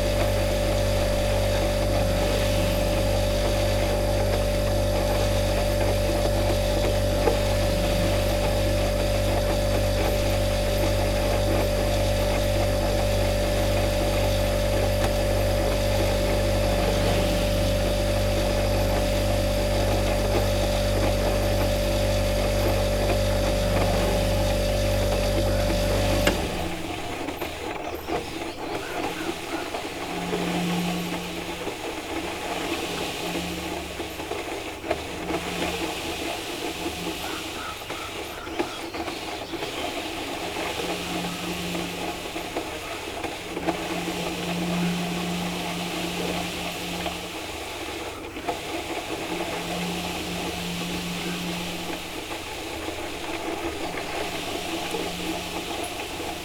Sasino, summerhouse at Malinowa Road, kitchen - appliances
kitchen appliances in operation - fridge compressor, coffee machine, inductive stove (sony d50)